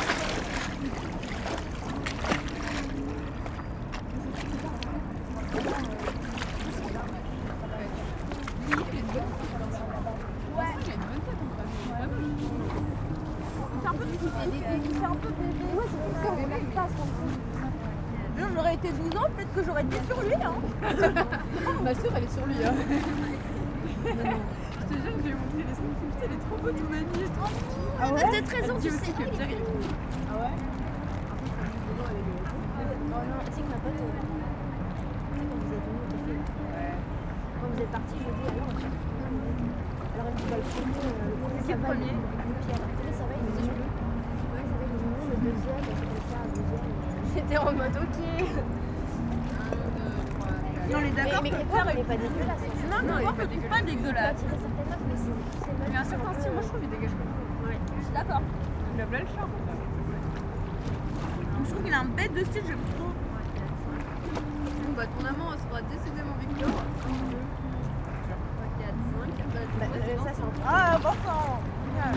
Île de la Cité, Paris, France - Tourists and sun
Young tourists discuss about sexfriends, water of the Seine river is flowing on stairs, a big tourist boat begins to navigate.